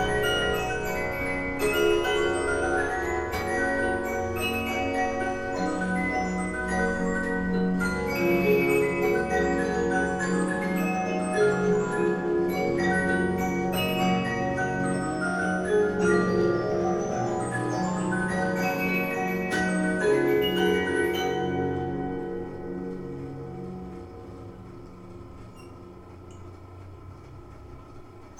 Ellsworth County Museum, W South Main St, Ellsworth, KS, USA - Hodgden House Music Box
An antique Olympia music box plays the ragtime tune, Smokey Mokes Cake-Walk and Two-Step (1899) by Abe Holzmann. Occasional hand-cranking by head staffer Bea Ramsey. After she lets the disc work its way to the start of the melody, it plays two and a half times. Recorded in the Hodgden House, a former residence, now part of the museum complex. Stereo mics (Audiotalaia-Primo ECM 172), recorded via Olympus LS-10.